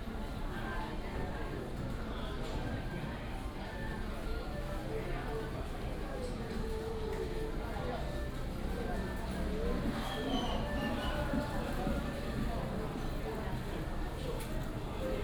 Dajia Jenn Lann Temple, Dajia District - Walk in the temple inside
Walk in the temple inside
Dajia District, 順天路156巷29號, 19 January 2017, 10:58